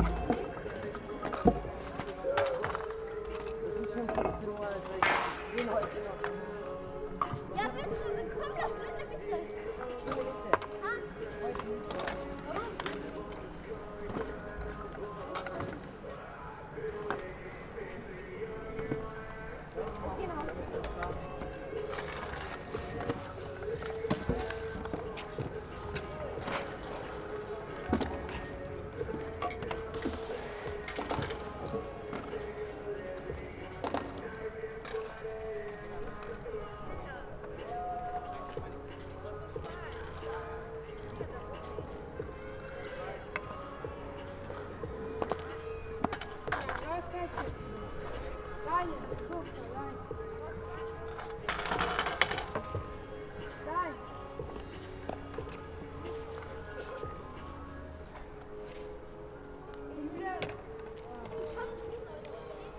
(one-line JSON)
{"title": "Bellevue Park, Bindermichl Tunnel, Linz - scooter and skater area", "date": "2020-09-08 17:20:00", "description": "kids and youngsters practising with scooters\n(Sony PCM D50)", "latitude": "48.27", "longitude": "14.30", "altitude": "277", "timezone": "Europe/Vienna"}